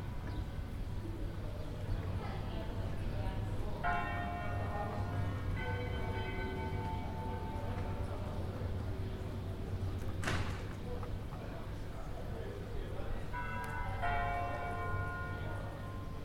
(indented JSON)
{
  "title": "Ermoúpoli, Grèce - Bells and rain",
  "date": "2012-04-18 16:00:00",
  "latitude": "37.44",
  "longitude": "24.94",
  "altitude": "27",
  "timezone": "Europe/Athens"
}